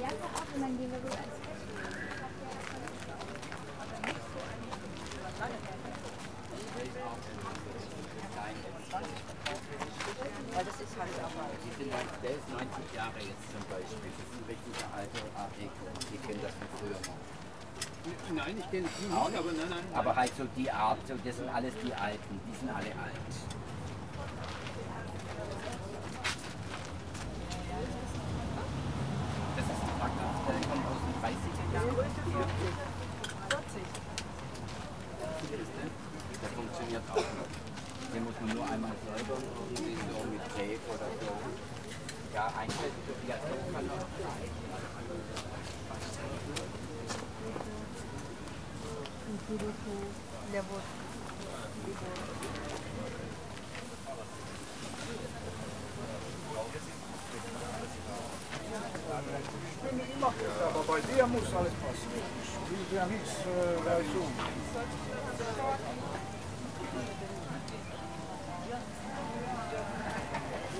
Berlin, Kreuzberg, green area at Bergmannstraße - flea market
a few stands with all kinds of items for sale deployed around small green area next to Marheineke Halle. people rummaging through boxes, questioning the value of the items, bargaining over price with sellers, talking. music being played from cheep stereos.